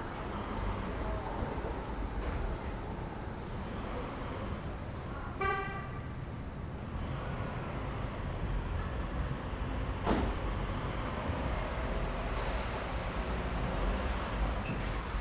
Sainsbury's, Portswood, Southampton, UK - 004 Staff (signing off), shoppers (going home)
Sainsbury's car park. Tascam DR-40